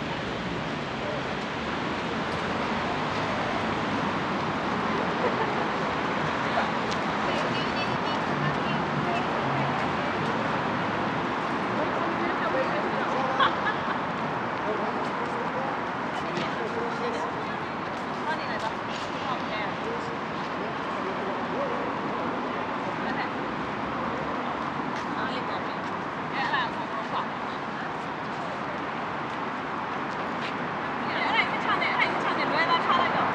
Queens University, Belfast, UK - Queens University Belfast-Exit Strategies Summer 2021
Recording of locals and tourists visiting the front of the Lanyon Building at Queen’s University Belfast. In the distance, there is a photographer instructing a bridge and groom for certain poses to take in front of the building. There is vehicle traffic in the background and moments of the pedestrian cross lights being activated.